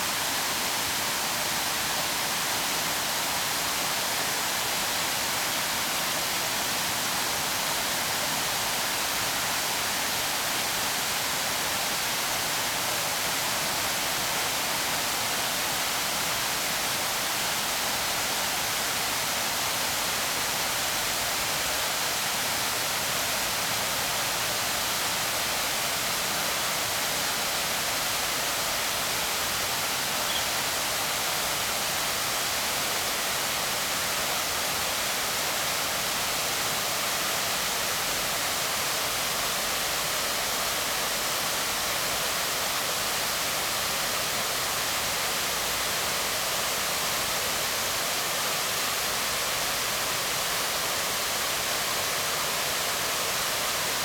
撒固兒瀑布, Hualien City - waterfall
waterfall
Zoom H2n MS+XY +Spatial Audio
Hualien City, Hualien County, Taiwan, 14 December 2016, 09:10